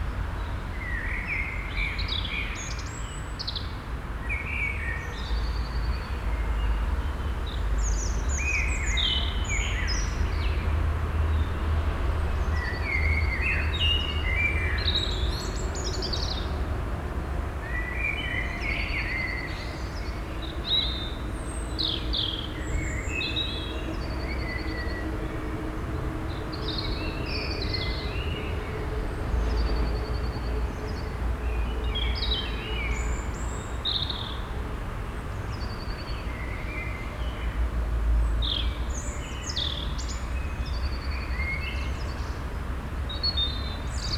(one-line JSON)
{"title": "Südostviertel, Essen, Deutschland - essen, goebenstr 24, private garden", "date": "2014-04-09 06:15:00", "description": "A second recording, some minutes later - traffic increase\nEine zweite Aufnahme einige Minuten später. Sukzessive Zunahme des Verkehrs.\nProjekt - Stadtklang//: Hörorte - topographic field recordings and social ambiences", "latitude": "51.45", "longitude": "7.03", "altitude": "105", "timezone": "Europe/Berlin"}